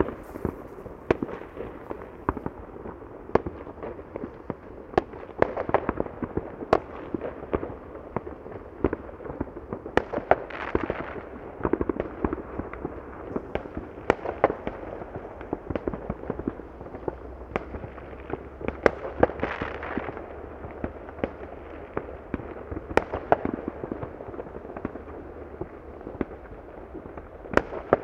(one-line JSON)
{"title": "New Year's fireworks, Severodvinsk, Russia - New Year's fireworks", "date": "2014-01-01 00:40:00", "description": "New Year's fireworks.", "latitude": "64.54", "longitude": "39.79", "altitude": "7", "timezone": "Europe/Moscow"}